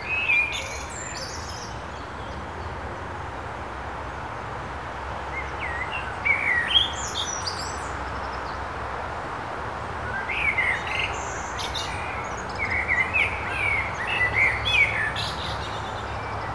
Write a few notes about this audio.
abundance of birds in the branches above me. chirps resonating from all directions.